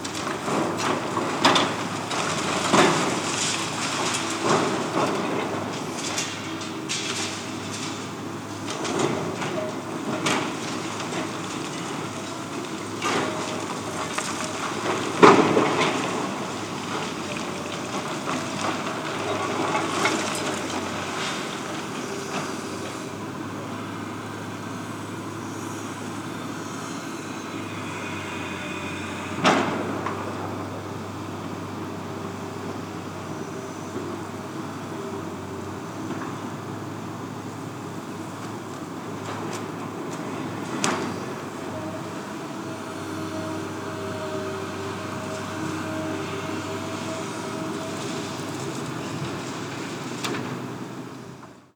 berlin - eisfabrikskelett
eisfabrik, skelett, deconstruction, TLG, monument, monumental care, veb kuehlhaeuser